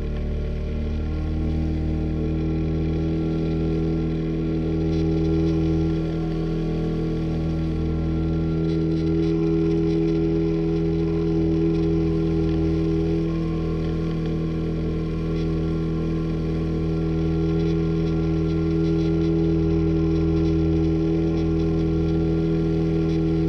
{"title": "Taman Negara Rainforest, Malaysia - drone log 18/02/2013", "date": "2013-02-18 17:02:00", "description": "long-boat on Sungai Pahang, between Kuala Tembeling and Taman Negara\n(Zoom h2, contact mic on wooden floor)", "latitude": "4.30", "longitude": "102.38", "altitude": "81", "timezone": "Asia/Kuala_Lumpur"}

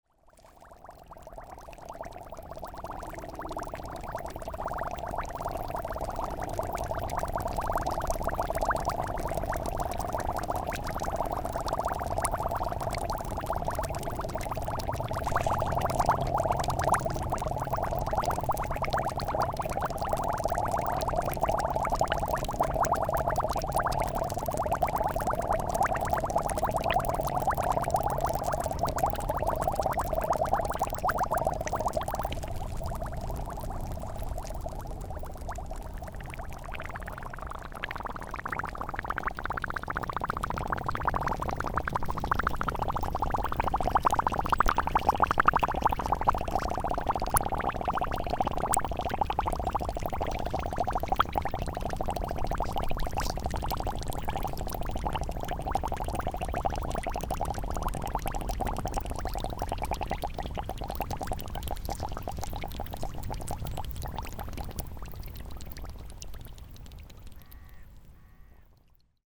{"title": "Hénouville, France - High tide", "date": "2016-09-18 12:00:00", "description": "The high tide on the Seine river is called Mascaret. It arrives on the river like a big wave. On the mascaret, every beach reacts differently. Here the ground makes bubbles.", "latitude": "49.46", "longitude": "0.94", "altitude": "7", "timezone": "Europe/Paris"}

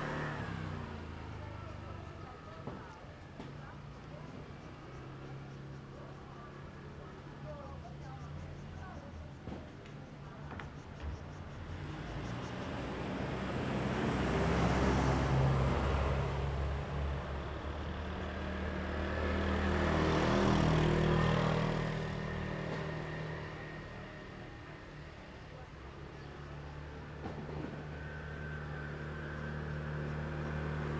{"title": "金崙林道, Jinfong Township - Small village", "date": "2014-09-05 18:01:00", "description": "In the street, Small village, Traffic Sound\nZoom H2n MS +XY", "latitude": "22.53", "longitude": "120.96", "altitude": "34", "timezone": "Asia/Taipei"}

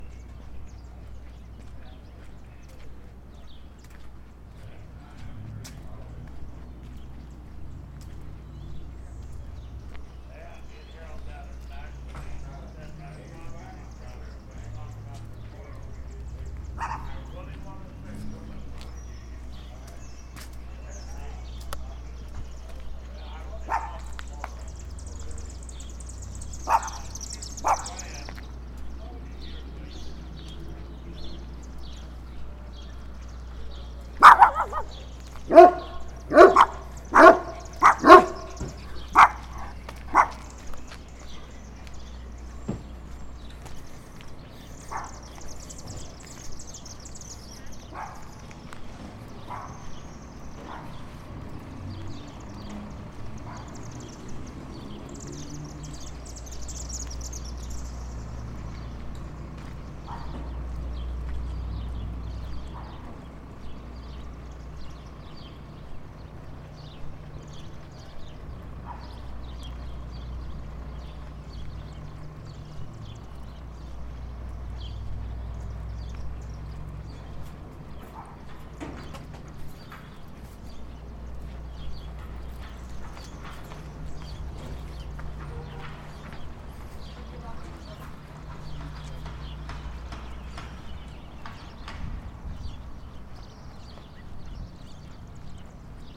{"title": "Nebraska City, NE, USA - Two Dogs", "date": "2013-05-26 14:45:00", "description": "Recorded with Zoom H2. Recordings from Nebraska City while in residence at the Kimmel Harding Nelson Center for the Arts in Nebraska City from May 13 – May 31 2013. Source material for electro-acoustic compositions and installation made during residency. Walking down the main street I met two dogs.", "latitude": "40.68", "longitude": "-95.85", "altitude": "307", "timezone": "America/Chicago"}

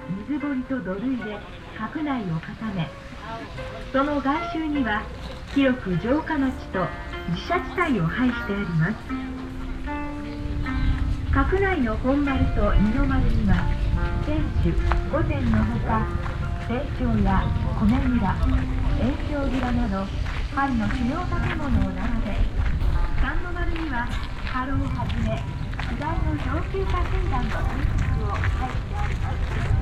{"title": "masumoto - castle garden", "date": "2010-07-26 09:39:00", "description": "in the garden/ park of the famous masumoto castle - steps on the stone park way - automated advisor speech and music for visitors\ninternational city scapes and social ambiences", "latitude": "36.24", "longitude": "137.97", "altitude": "594", "timezone": "Asia/Tokyo"}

